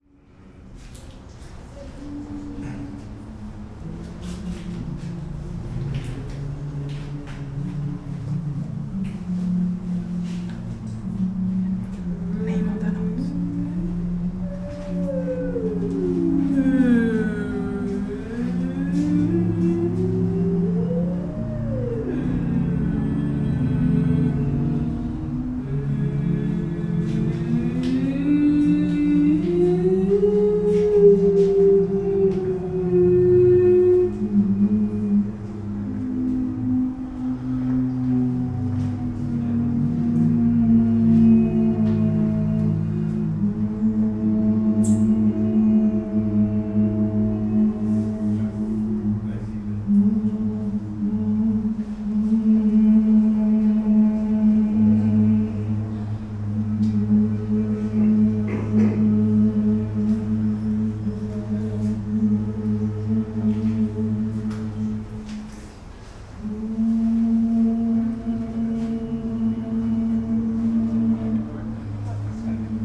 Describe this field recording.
singing in the Chromatico sculpture by lukas Kuhne